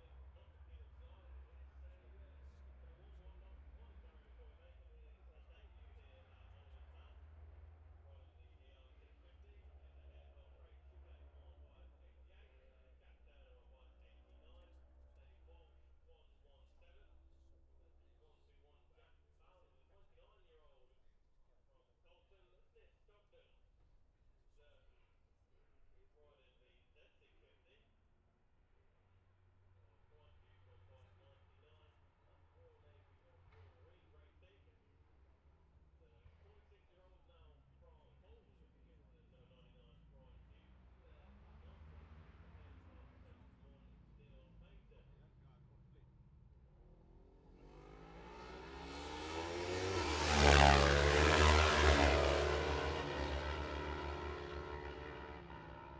bob smith spring cup ... twins group B ... dpa 4060s to MixPre3 ...
Scarborough, UK